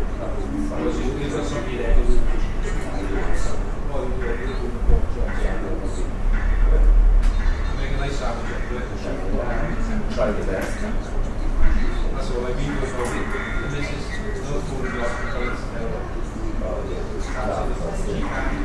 Hotel Bar, gambling machine playing The Pink Panther Theme